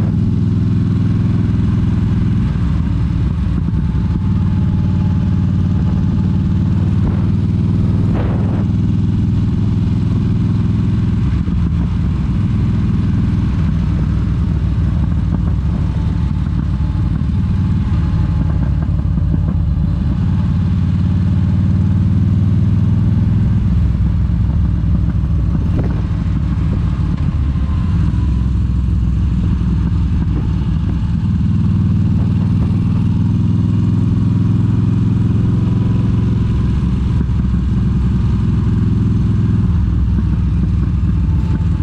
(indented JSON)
{
  "title": "The Circuit Office, Oliver's Mount, Olivers Mount, Scarborough, UK - a lap of oliver's mount ...",
  "date": "2022-08-12 14:30:00",
  "description": "a lap of olivers mount ... on a yamaha xvs 950 evening star ... go pro mounted on helmet ... re-recorded from mp4 track ...",
  "latitude": "54.26",
  "longitude": "-0.41",
  "altitude": "95",
  "timezone": "Europe/London"
}